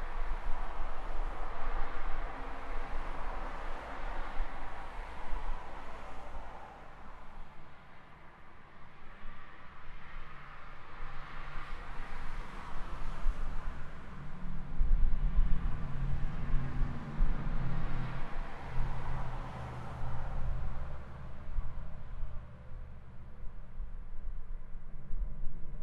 Rissen, Hamburg, Deutschland - Traffic
Traffic rushing in and out the city
Hamburg, Germany, 6 February 2016